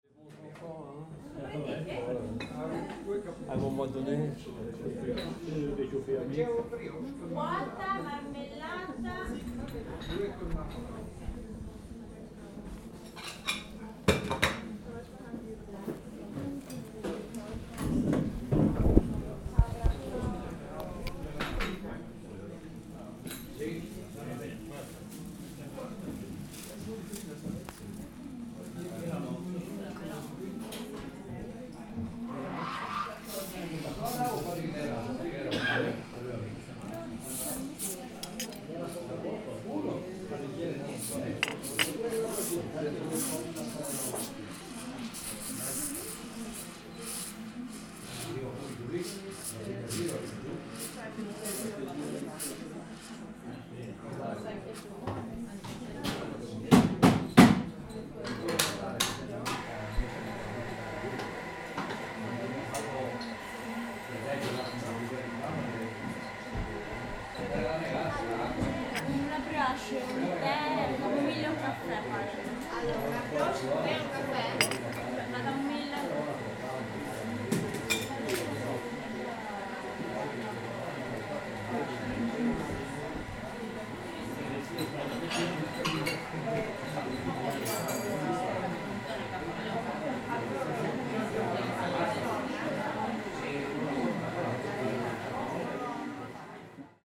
caffè bar, luino
Kaffeebar, Markt am Mittwoch, typische Italianità, Espresso